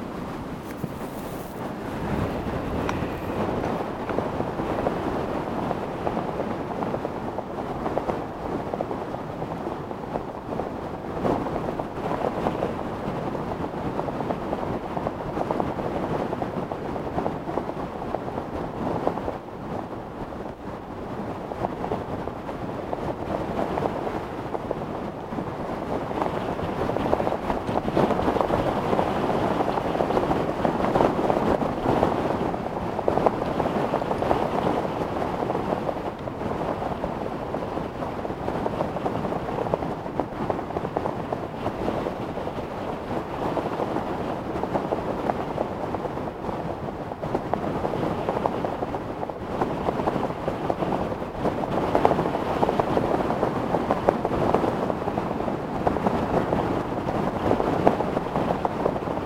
Vebron, France - Gargo mount
Climbing the Gargo mount. This is the most powerful wind I ever knew, with 130 km/h wind and 180 km/h bursts. Here is the summit. The wind is so powerful that my jacket is riven !